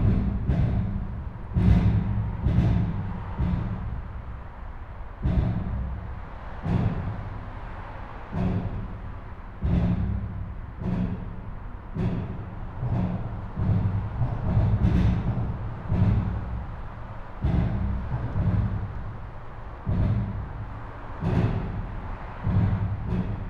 Dietikon, Schweiz - Limmat Autobahnbrücke
If you walk along the Limmat coming from Zürich you will come across a highwaybridge in Dietikon and this unintended drummachine
Bezirk Dietikon, Zürich, Schweiz/Suisse/Svizzera/Svizra, 15 October 2016